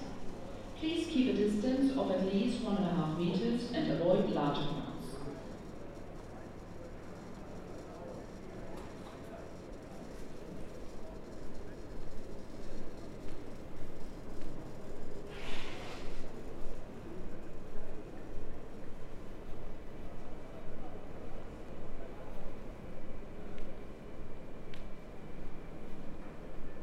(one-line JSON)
{"title": "Passage Airport to Trainstation, Frankfurt am Main, Deutschland - An empty aisle with anouncements to keep distance", "date": "2020-04-24 16:53:00", "description": "This recording is the first of two, one made when I went to the train station. This aisle is mostly very very busy, but now very very empty...", "latitude": "50.05", "longitude": "8.57", "altitude": "116", "timezone": "Europe/Berlin"}